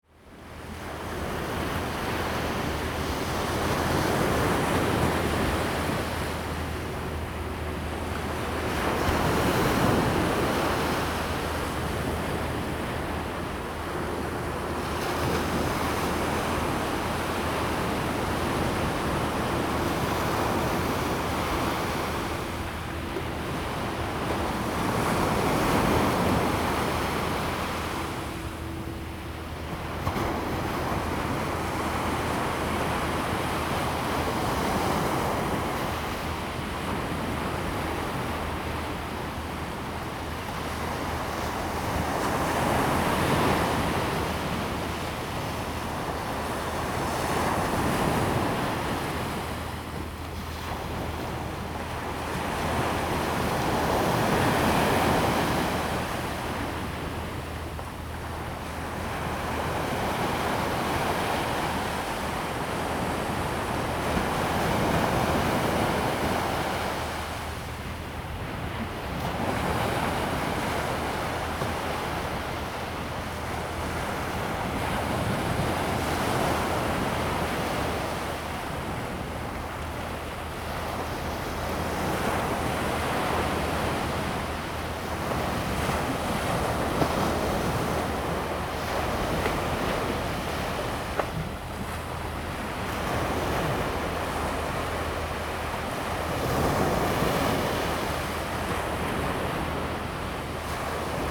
Tamsui District, New Taipei City - Sound of the waves
On the beach, Sound of the waves
Zoom H2n MS+XY
New Taipei City, Taiwan, 5 January 2017